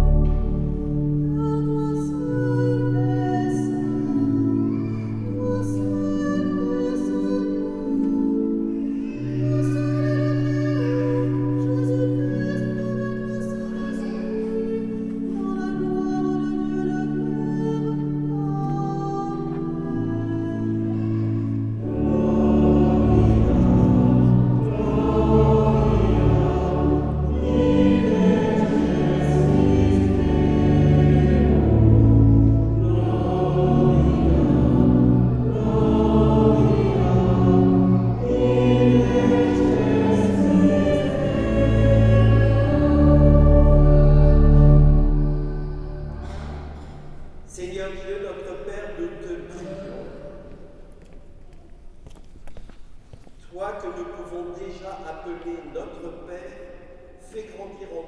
Tours, France - Mass in the cathedral
The afternoon mass in the Saint-Gatien cathedral. It's only the beginning of the mass as it's quite soporific.
13 August 2017